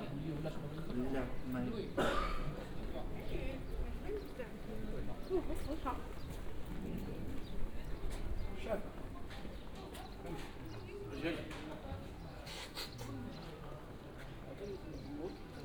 El Ksour, Marrakesch, Marokko - street ambience at mosque Mouassin
unexcited street live and ambience at mosque Mouassin
(Sony D50, DPA4060)